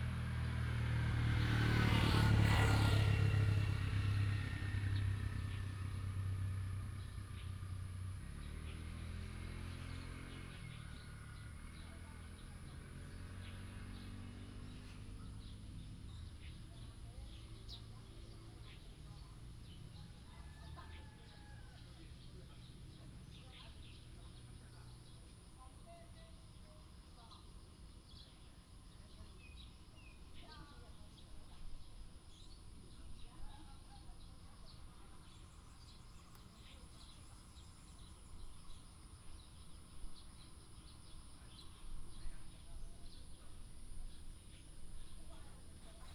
竹43鄉道富興, Emei Township - small village
A small village in the mountains, Traffic sound, sound of birds, Chicken cry, Planted areas of tea, Binaural recordings, Sony PCM D100+ Soundman OKM II
Hsinchu County, Emei Township, 竹43鄉道15號